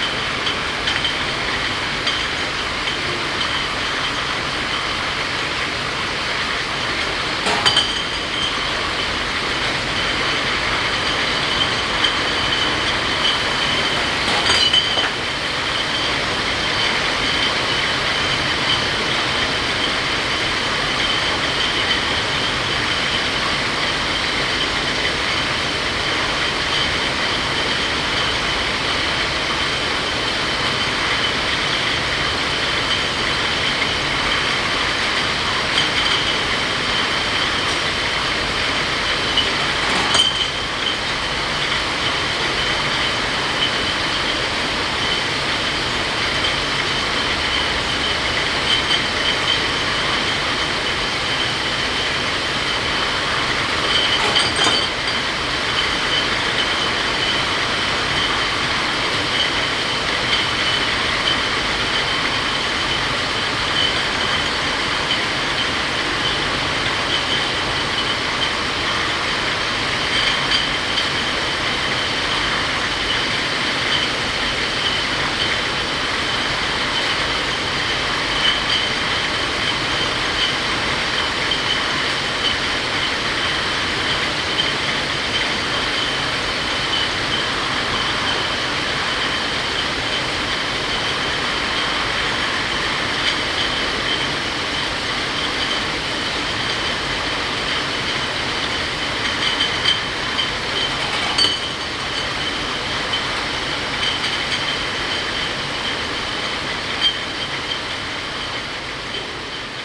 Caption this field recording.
In der Privatbrauerei Stauder. Der Klang der Flaschenabfüllungsanlage. Inside the private brewery Satuder. The sound of the bottling. Projekt - Stadtklang//: Hörorte - topographic field recordings and social ambiences